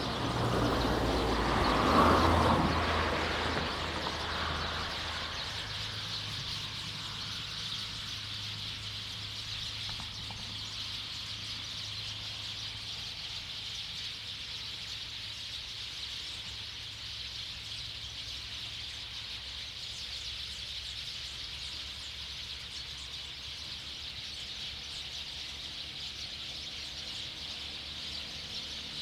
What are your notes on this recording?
Birdsong, Traffic Sound, Next to farmland, Zoom H2n MS+ XY